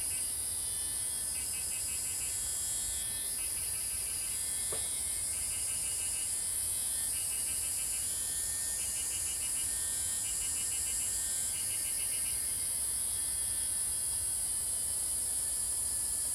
青蛙ㄚ 婆的家, 桃米里, Puli Township - Cicada and Insects sounds
Early morning, Cicada sounds, Birds singing, Insects sounds
Zoom H2n MS+XY
Puli Township, 桃米巷11-3號, 13 August 2015